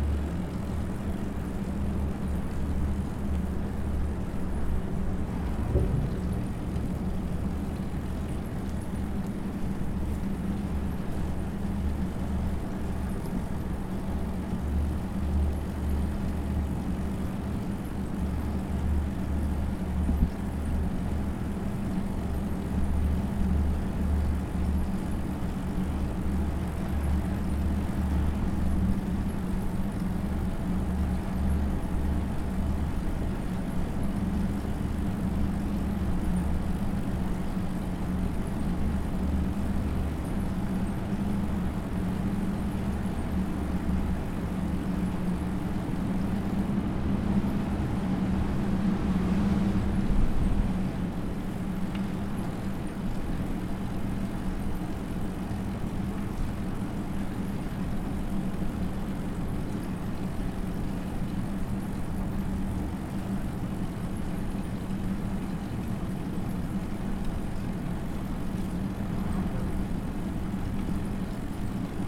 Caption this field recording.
Enregistrement en roulant dans la galerie du tunnel du Chat destinée aux cyclistes et piétons, un léger faux plat montant suivi d'un faux plat descendant, vent dans le dos. Un endroit dont on apprécie la fraîcheur en cette période de chaleur. Bruit de fond de la ventilation .